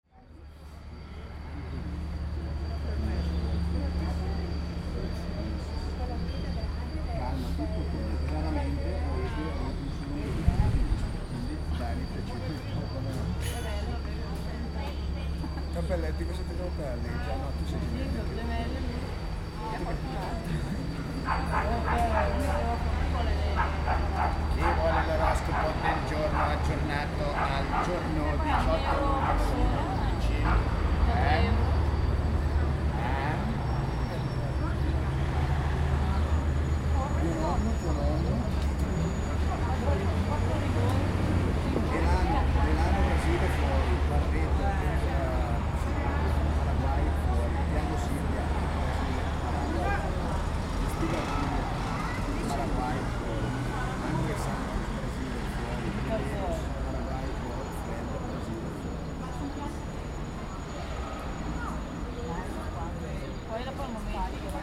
Strasenbar in Tirano Italien an der Flaniermeile